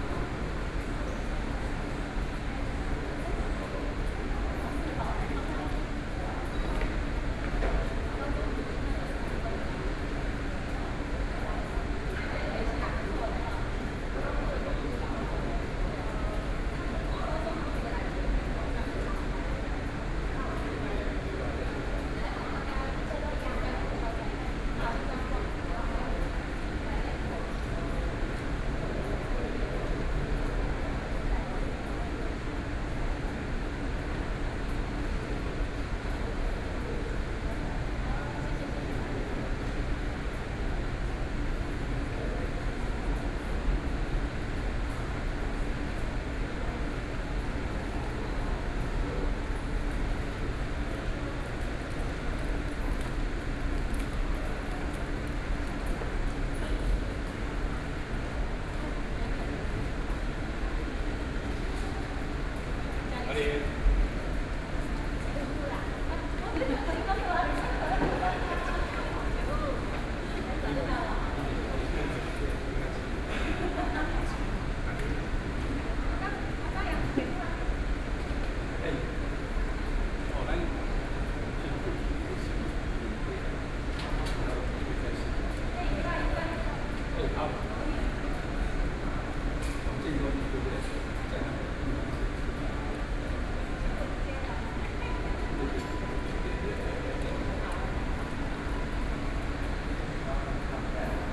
New Taipei City Government, Taiwan - Wedding restaurant entrance
Wedding restaurant entrance, Binaural recordings, Sony Pcm d50+ Soundman OKM II